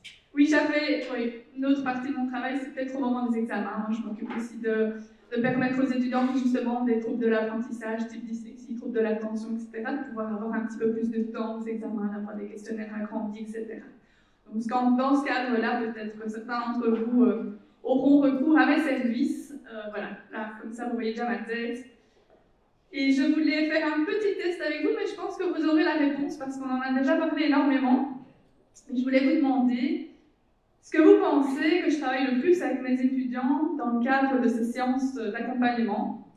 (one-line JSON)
{"title": "Centre, Ottignies-Louvain-la-Neuve, Belgique - Psychology course", "date": "2016-03-23 11:15:00", "description": "In the huge Socrate auditoire 41, a course of psychology, with to professors talking. Audience is dissipated.", "latitude": "50.67", "longitude": "4.61", "altitude": "117", "timezone": "Europe/Brussels"}